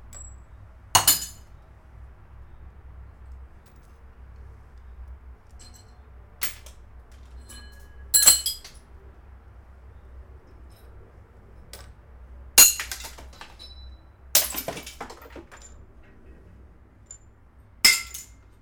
Polesie, Łódź Kaliska, Polska - broken glass in the well
Field recording of the urban ecology collaborative project with John Grzinich organized by the Museum of Art in Lodz, S?awas and John experimenting with pieces of broken glass
Poland, 4 April